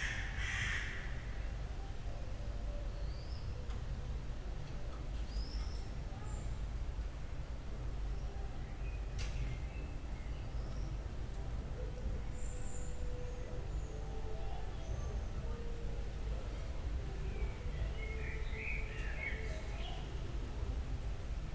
spring evening ambience in backyard, sounds from inside, crows, blackbird
Berlin, Germany, 15 June, ~22:00